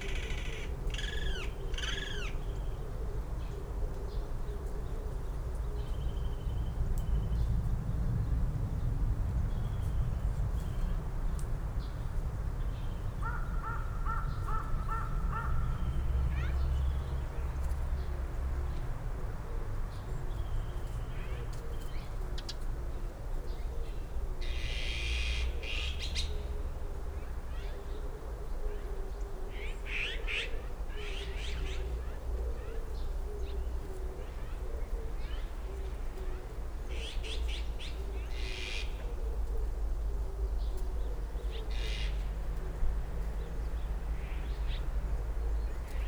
Taegyae Coppice 물가치들 (Azure Magpie colony)
Azure Magpies congregate in large groups around this part of Anma-san...there is a remnant coppice remaining on flat land at the foot of the steep hillside, despite much clearing of land in the surrounding area over these last few years...the coppice provides slightly different habitat than the nearby wild hillside, and there is a lot of protection, privacy and grazing for these birds...perhaps they nest in this area of trees...the voices of these Azure Magpies are distinct from the white/black Asian Magpies, and has an interesting noisy rythymic energy...